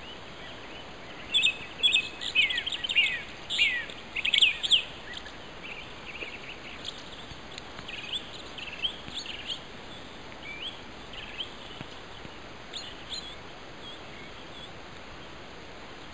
Turčianske Jaseno, Slovenská republika - Locality White stream, above Jasenska valley
30 March 2011, 18:44